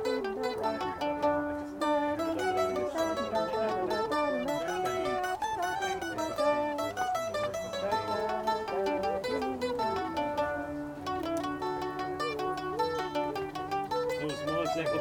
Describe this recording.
This is the sound of Kathleen and Aidan jamming by the fireside at our Glen of the Downs Road Protest 20 Years Reunion.